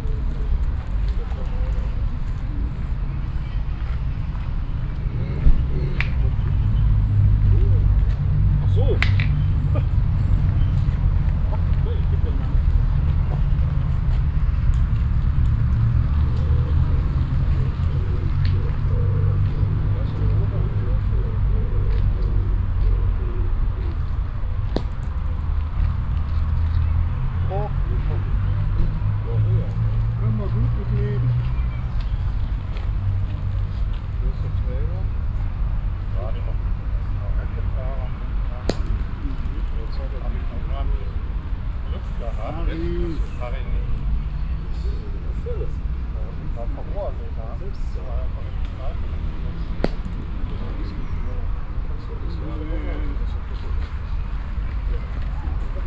essen, city park, boule player
In the evening a group of men playing boule on the foot path.
The sound of the players comments, the clicking metall balls, a jogger passing by and some pigeon calls.
Projekt - Klangpromenade Essen - topographic field recordings and social ambiences
Essen, Germany, 9 June, ~23:00